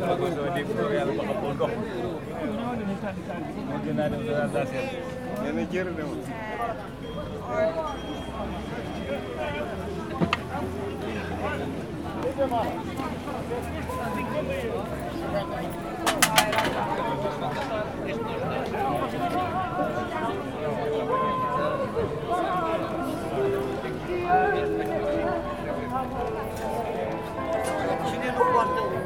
Lisbonne, Portugal - flea market
flea market that takes place every Tuesday and Saturday in the Campo de Santa Clara (Alfama)